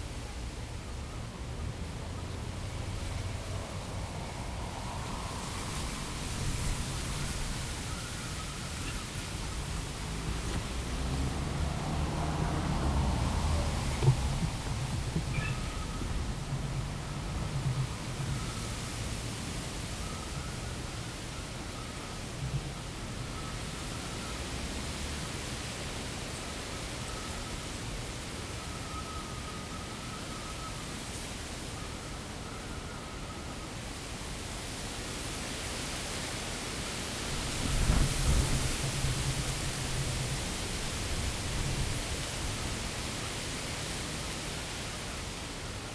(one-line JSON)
{"title": "Ulriks, Copenhagen, Denmark - Wind on groove of reeds", "date": "2012-07-09 12:50:00", "description": "Zoom h2n placed in a groove of reeds, close to a small wooden jetty in front of Frederiks Bastions, Copenhagen. Strong wind, windjammer.", "latitude": "55.68", "longitude": "12.61", "altitude": "6", "timezone": "Europe/Copenhagen"}